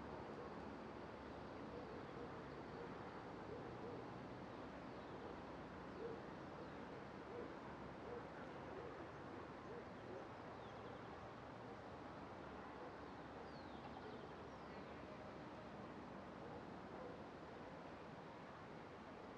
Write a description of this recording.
Mountain between "Cerro la Conejera" and "San José de Bavaria" to the northwest of Bogotá. Environment close to the city, wind, birds, barking dogs, motorcycles, buses and cars traveling on the road in the distance.